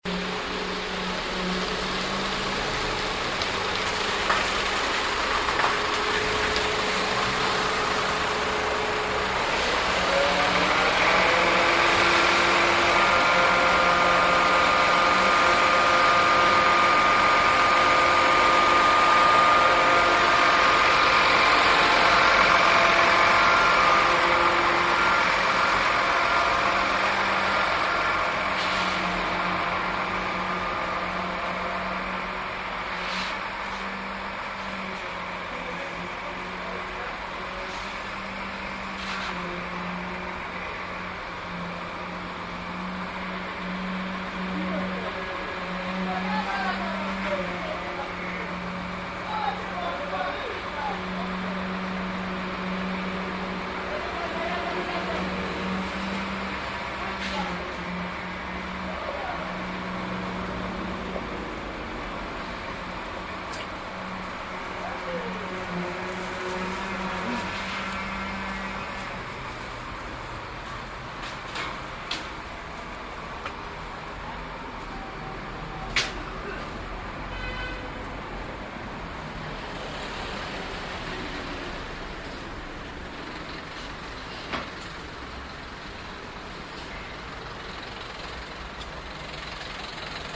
cologne, chlodwigplatz, grossbaustelle
soundmap cologne/ nrw
chlodwigplatz mittags, grossbaustelle der KVB U- Bahn tunnel
project: social ambiences/ listen to the people - in & outdoor nearfield recordings
26 May 2008, 18:57